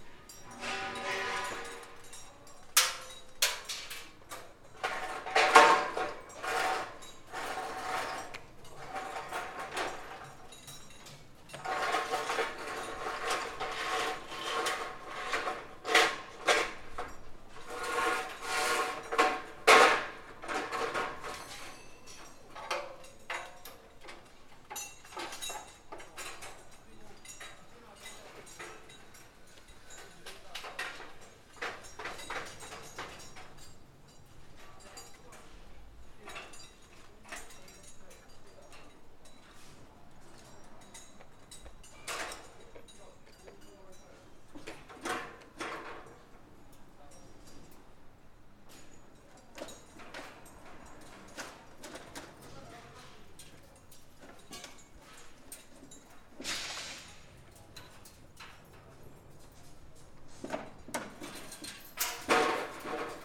Nabeyamamachi, Nakama, Fukuoka, Japan - Wooden House Construction
A group of builders completing the frame of a modern wooden house.
福岡県, 日本, 1 April